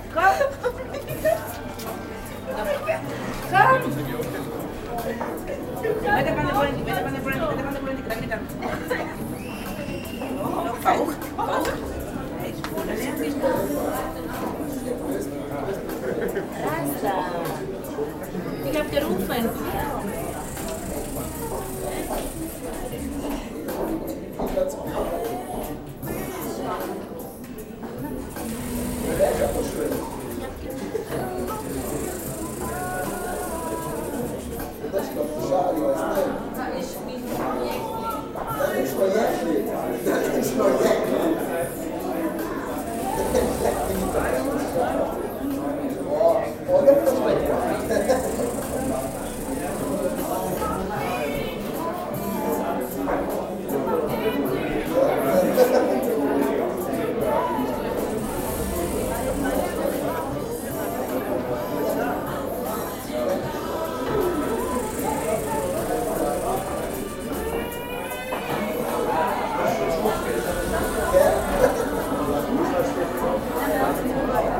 cinema entrance - cinema entrance, st. gallen
people talking & laughing, music etc.
the strange, repeated noise is the automatic door. recorded aug 31st, 2008.
Saint Gallen, Switzerland